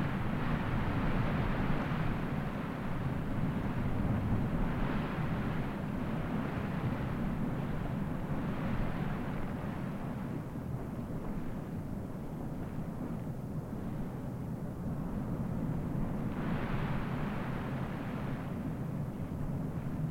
Mospalomas dunes, under the sand
hydrophones buried in the sand of dunes
San Bartolomé de Tirajana, Las Palmas, Spain, 29 January 2017, ~14:00